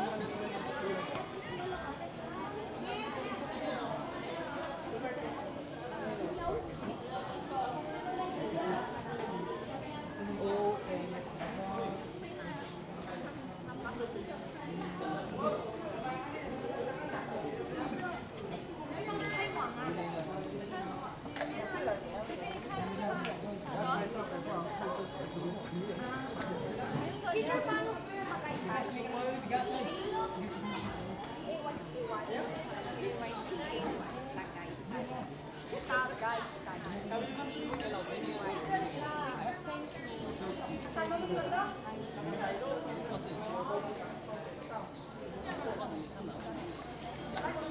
Calabuso North, Tagaytay, Cavite, Filippinerna - Tagaytay People´s Park in the Sky #2
Tourists, swallows and market vendors at the unfinished mansion (palace in the Sky) from the Marcos period in the eighties, now a tourist attraction with widespread views from the top of the inactive stratovulcano Mount Sungay (or Mount Gonzales). Recorded in the stairway between 1st floor and ground floor. WLD 2016
Cavite, Philippines, 17 July 2016, 10:50